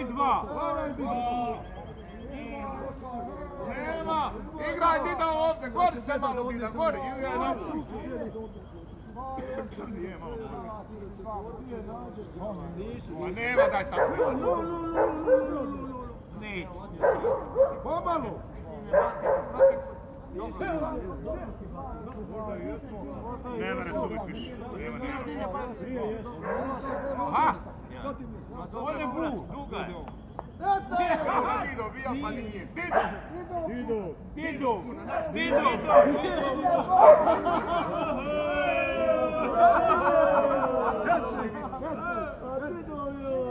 Volkspark Humboldthain, Berlin, Germany - White haired men play russian boule
Russian boule is a rougher version of the French game, with more contestants, longer distances to throw and more jumping while doing so. Big dogs play in the background